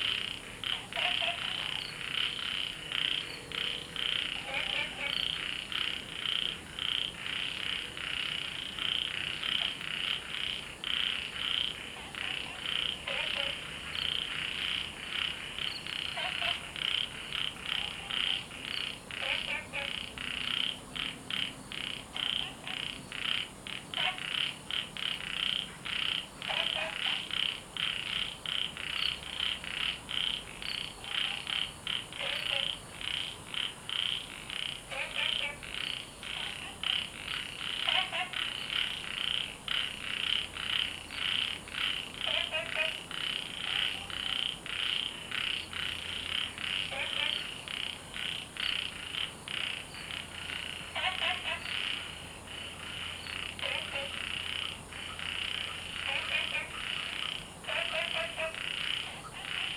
樹蛙亭, Puli Township - Frogs chirping
Sound of insects, Frogs chirping
Zoom H2n MS+XY
Puli Township, 桃米巷29-6號, 11 August 2015